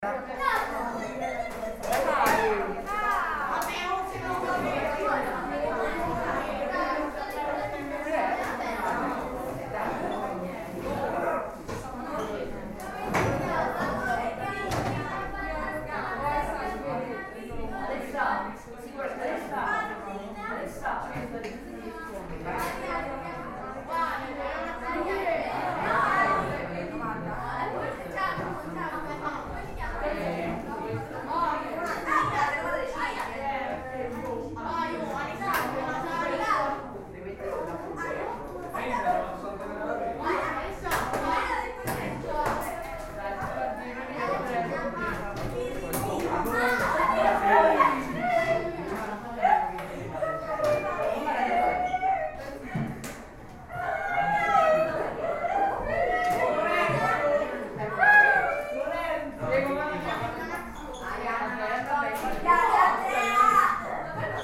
Via S. Serafino da Montegranaro, Ascoli Piceno AP, Italia - Indiana Tones -CECI 3A
Soundscape Project at school. In classroom. Ambient. Edirol R-09HR